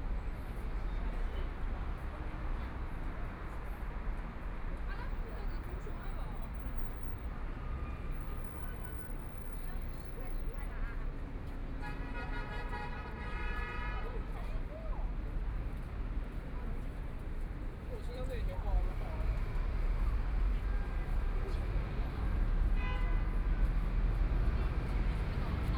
Huangpu District, Shanghai - Sitting on the roadside
Sitting on the roadside, outside of the coffee shop, The Bund (Wai Tan), The pedestrian, Traffic Sound, Binaural recording, Zoom H6+ Soundman OKM II